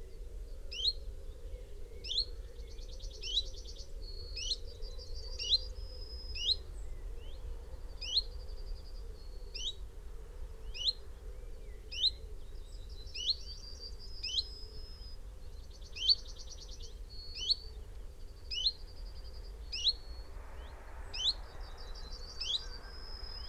Malton, UK - chiffchaff nest site ...
chiffchaff nest site ... male in tree singing ... female calling as she visits nest with food ... possibly second brood ... xlr sass on tripod to zoom h5 ... bird calls ... song ... from ... yellowhammer ... dunnock ... eurasian wren ... whitethroat ... carrion crow ... pheasant ... quail ... herring gull ... background noise ...
England, United Kingdom, 16 July 2022, ~05:00